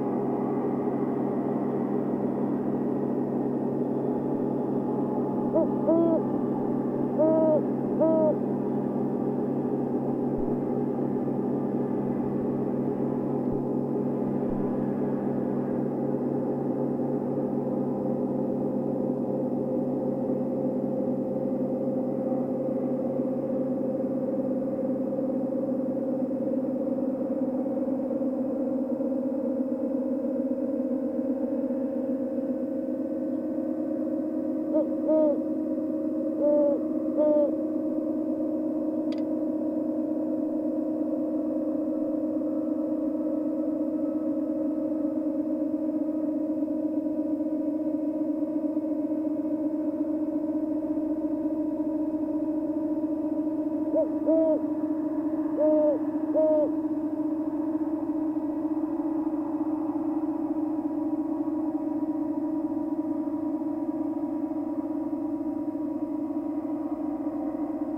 Bartlett, CA, USA - Owl Hooting Near Aeolian Harp
Metabolic Studio Sonic Division Archives:
Recording of a hooting owl inside abandoned factory next to a large silo turned into an Aeolian Harp. Background droning tones are the harp itself which is a series of metal strings running along side the outside of silo. Two microphones are placed near the owl nest and near the aeolian harp/silo